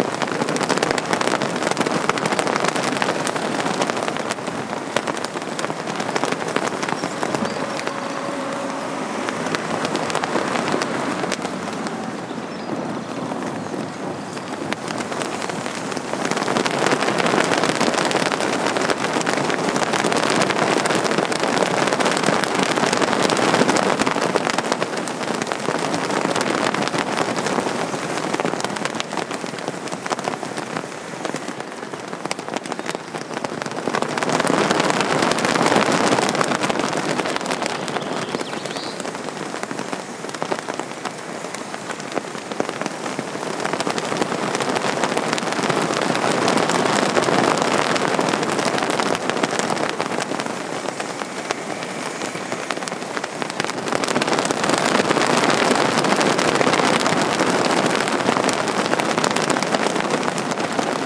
The Gill, Ulverston, Cumbria - Flags
Ulverston Flag Festival. The start of the Cumbria Way. Twenty silk flags flapping on a bright and sunny, windy day in Spring.